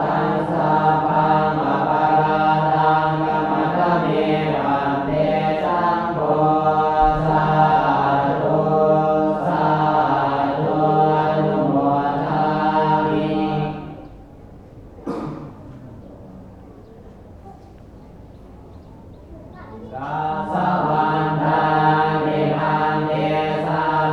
Luang Prabang, Wat Mai, Ceremony
End of ceremony
Luang Prabang, Laos